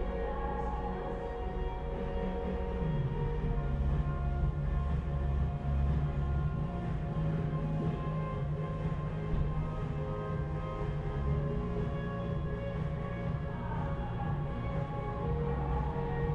Sounds from a girls' dance lesson, recorded from the first floor, across the street.